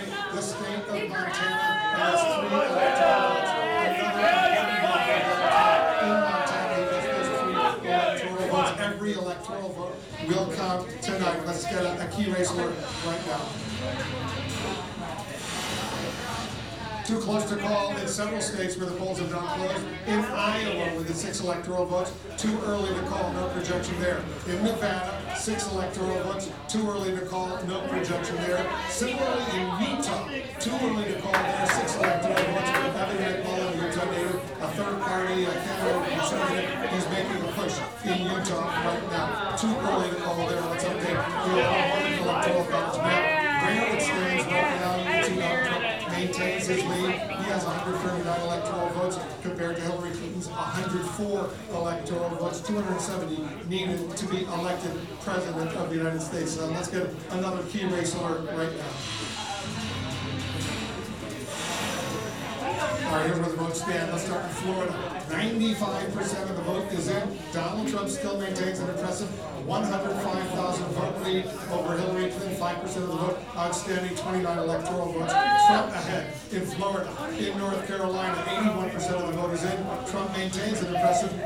Flatbush - Ditmas Park, Brooklyn, NY, USA - Election Night in a Bar in Brooklyn.
Election Night in a Bar in Brooklyn.
USA presidential election of 2016, held on Tuesday, November 8, 2016.
Zoom H4n